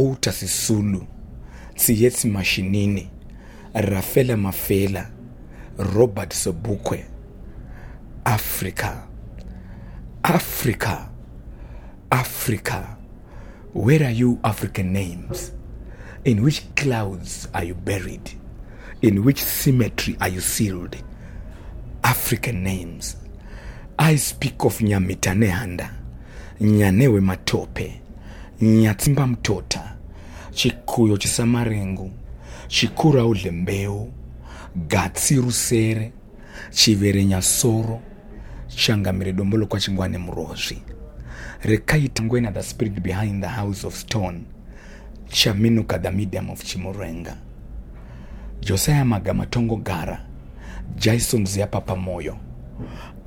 The Book Cafe, Harare, Zimbabwe - The Black Poet recites “Africa, my Wonderland…”
The Black Poet aka Mbizo Chiracha recites his work for my mic in the small accountant’s office at the Book Café Harare, where he often presents his songs during Sistaz Open Mic and other public events. In the middle of the piece the poet asks: “Where are you African names? In which clouds are you buried…?”